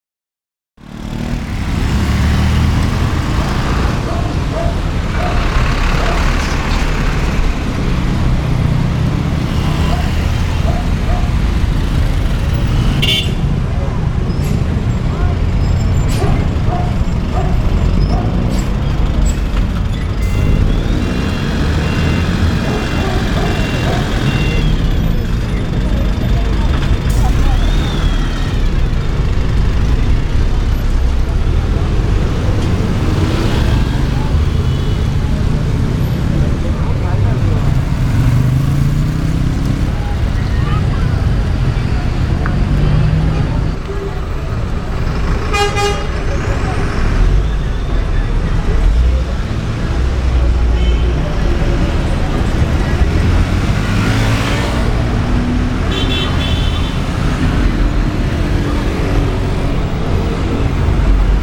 Cra., Bogotá, Colombia - Venececia, Av. 68
It is a main avenue in a popular and commercial neighborhood in the south of Bogota. The engines of the cars and buses that pass through this area at 6pm as the main corridor. The whistles of cars and motorcycles are heard from time to time in the foreground along with people riding their bicycles at a considerable speed. People pass by talking loudly in colloquial language.
21 May 2021, 18:00